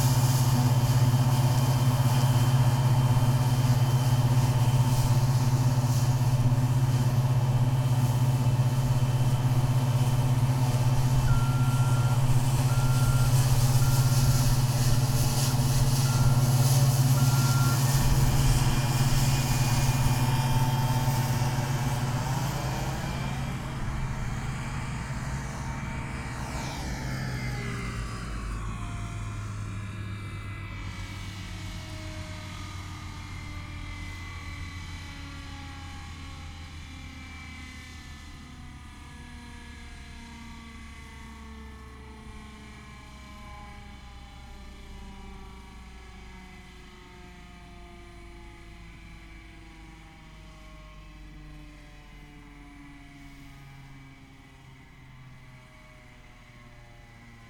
stodby, lolland, combined harvester
a combined harvester in a barley field - then stopping and run down of the machine - some wind
international landscapes - topographic field recordings and social ambiences